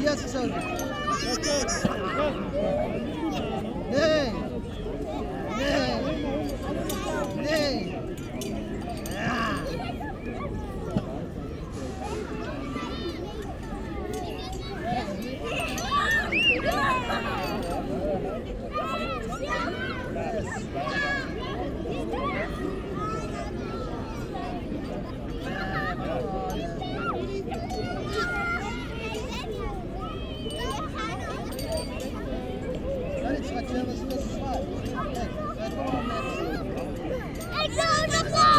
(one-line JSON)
{"title": "Breda, Nederlands - Kindergarden", "date": "2019-03-30 16:20:00", "description": "During a very sunny week-end, a lot of children playing in the kindergarden.", "latitude": "51.59", "longitude": "4.78", "altitude": "6", "timezone": "Europe/Amsterdam"}